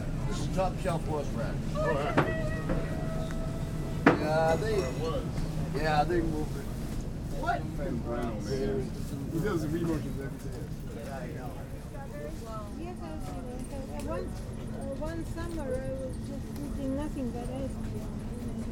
Trader Joe's, Cambridge - Trader Joe's Soundscape
A soundscape recorded using a Sony Digital recorder.
Cambridge, MA, USA, 1 December